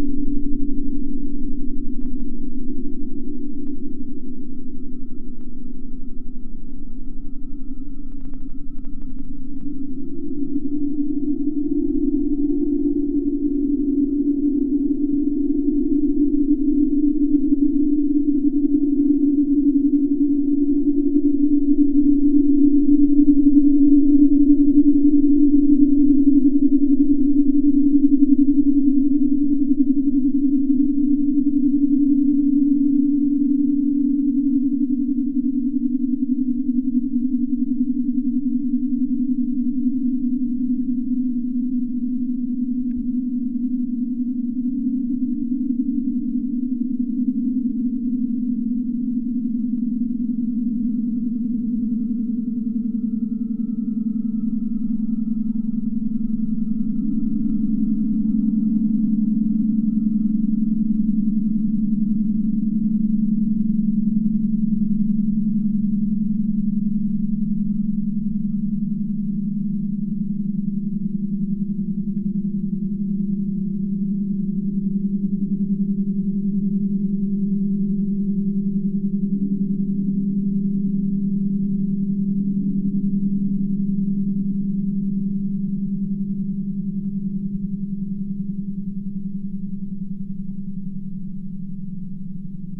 Vilnius, Lithuania, kind of Aeolian harp
aome kind of abandoned flag pole. tall and rusty with not less rusty wire. and it plays in breeze! you cannot hear it with naked ear but with help of geophone....
Vilniaus miesto savivaldybė, Vilniaus apskritis, Lietuva